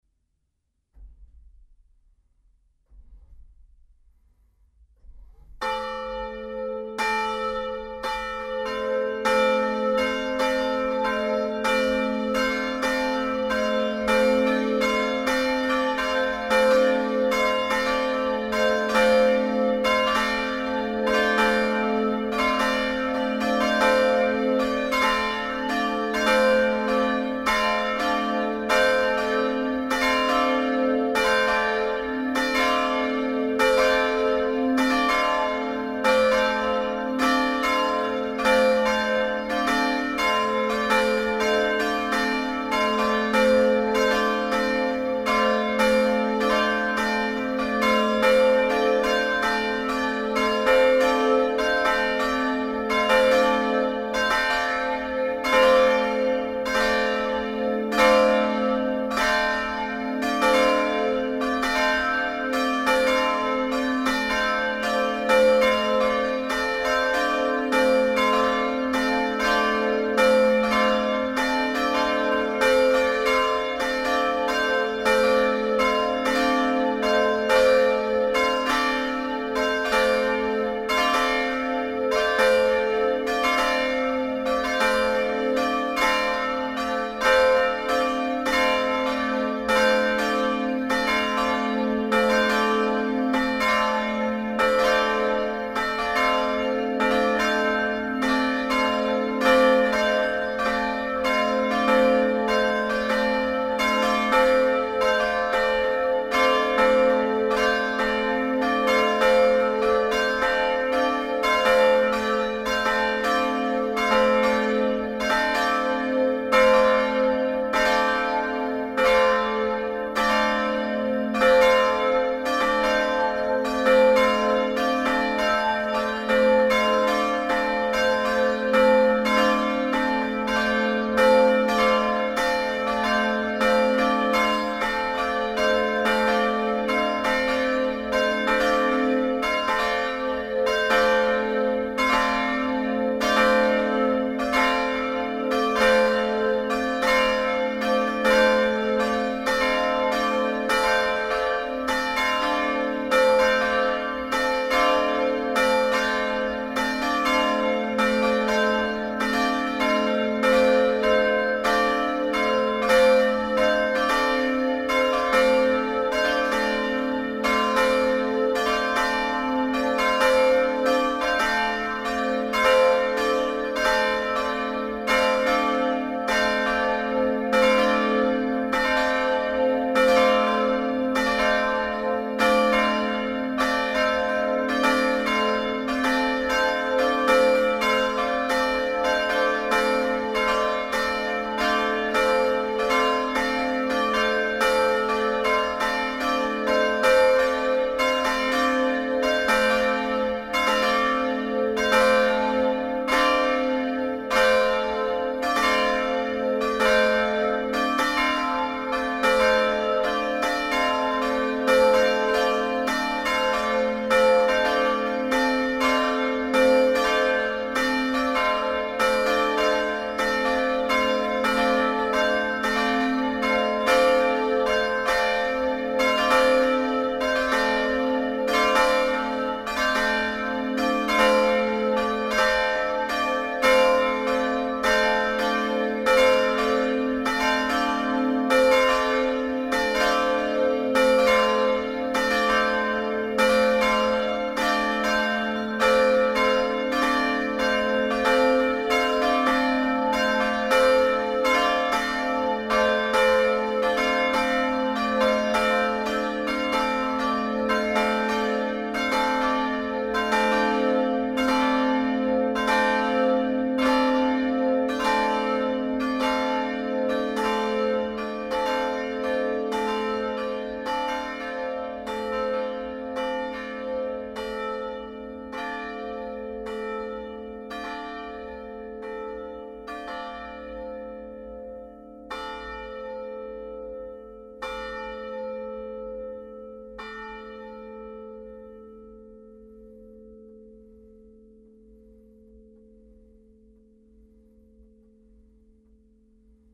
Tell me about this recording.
The Corbais bells, recorded inside the tower.